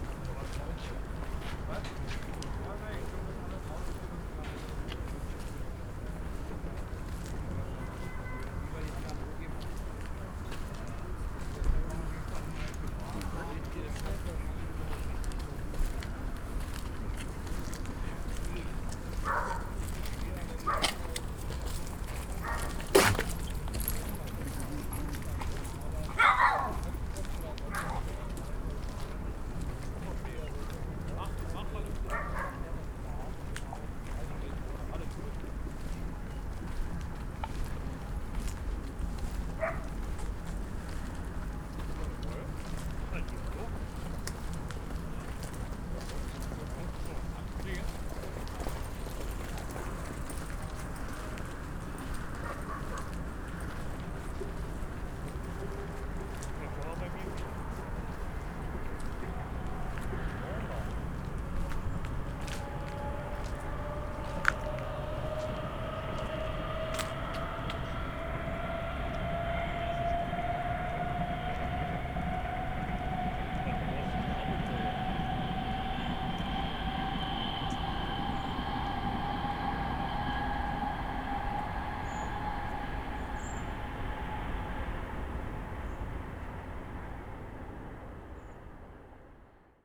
allotment, Dieselstr., Neukölln, Berlin - walk in garden plot, ambience
summer evening, short walk in the allotment, many of the gardens left hand are closed due to the expansion of the planned A100 motorway. however, people live in the abandoned shacks under apparently precarious conditions.
(Sony PCM D50, DPA4060)
Deutschland, European Union, 8 July